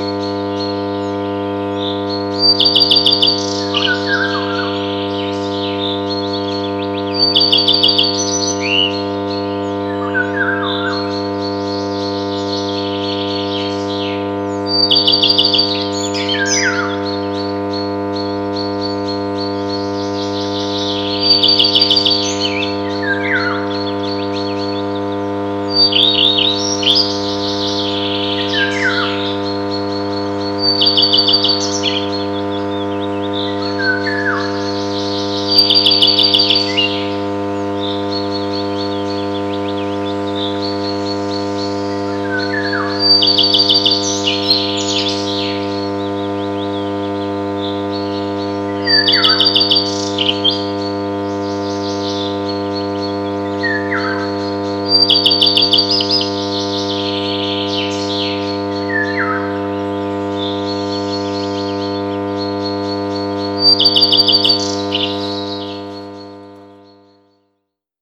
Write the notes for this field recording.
Las transformator rec. Rafał Kołacki